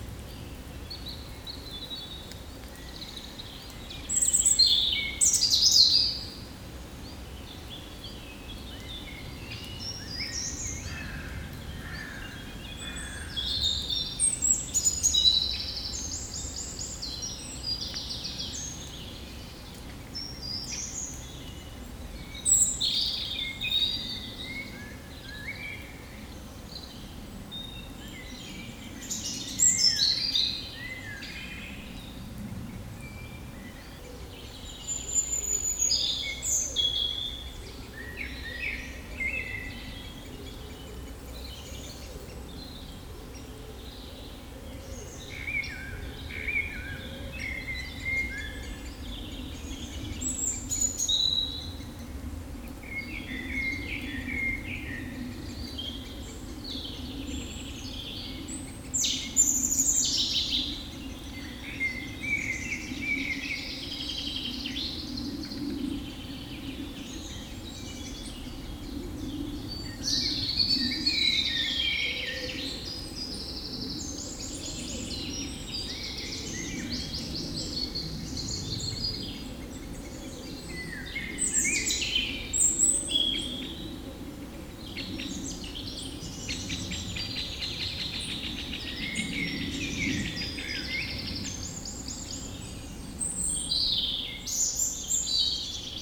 Lasne, Belgique - In the woods
Recording of the birds in the woods. The bird is a European Robin.